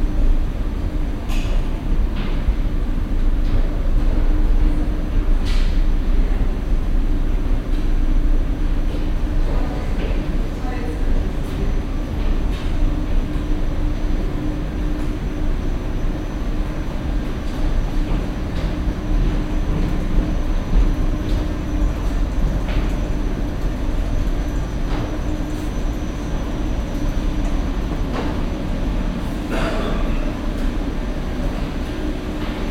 essen, gelände zeche zollverein, kohlenwäsche, rolltreppe
Auf der Rolltreppe zu der von Rem Kohlhaas umgestalten ehemaligen Kohlenwäsche auf dem Gelände des Weltkulturerbes Zeche Zollverein.
Projekt - Stadtklang//: Hörorte - topographic field recordings and social ambiences
13 November 2008, ~15:00